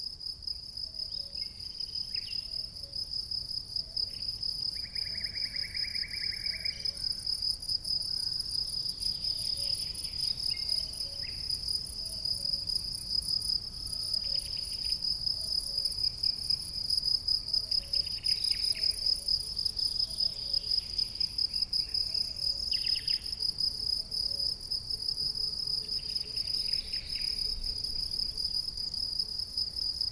Valonsadero, Soria, Spain - Grilos em Valonsadero - Crickets chirping in Valonsadero
Crickets chirping during the day time in the Valonsadero Natural park, Soria, Spain. Recorded in the Spring of 2012, as part of the Douro Soundscape Project.
16 April 2012